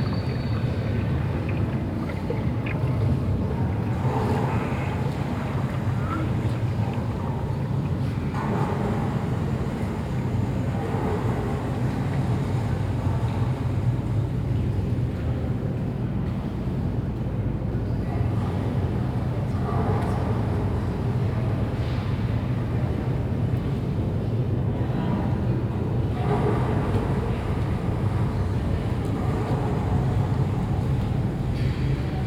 Stoppenberg, Essen, Deutschland - essen, zollverein, schacht XII, halle 8, sound installation
At Zeche Zollverein in Hall 8. The sound of a temporary sound installation by sound artist Christine Kubisch plus steps and voices of visitors during the opening. The title of the work is" Unter Grund". The sound room is composed out of recordings of the 1000 m underground constantly working water pump system underneath the mine areal.
The work has been presented during the festival"Now"
soundmap nrw - topographic field recordings, social ambiences and art places
Gelsenkirchener Street, Essen, Germany